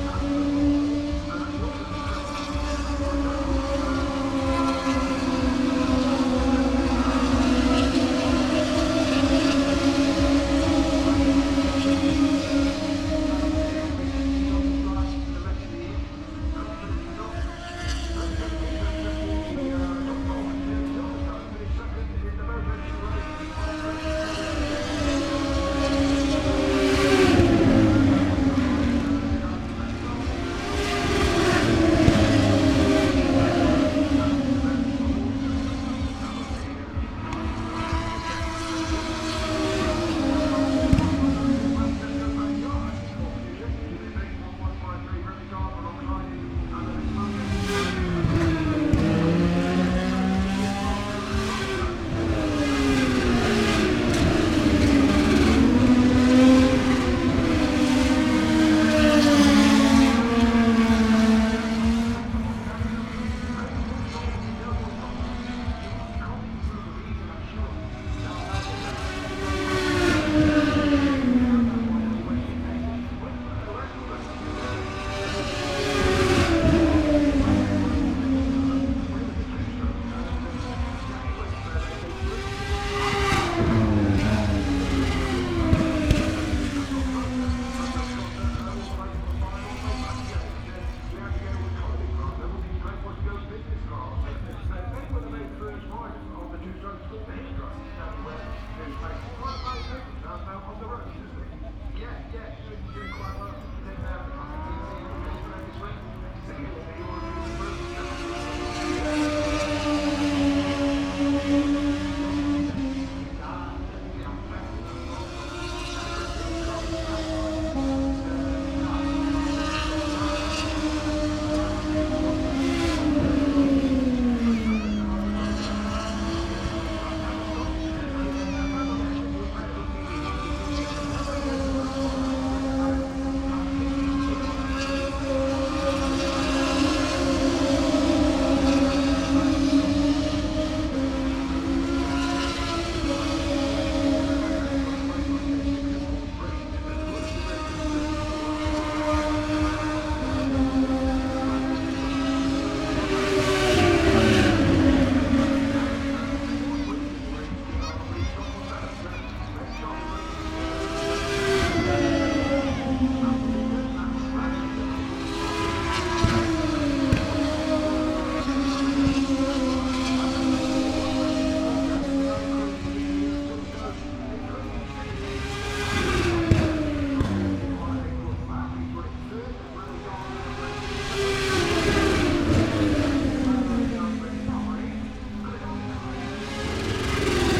Silverstone Circuit, Towcester, UK - british motorcycle grand prix 2019 ... moto two ... fp1 ...
british motorcycle grand prix 2019 ... moto two ... free practice one ... some commentary ... lavalier mics clipped to bag ... background noise ... disco in the entertainment zone ...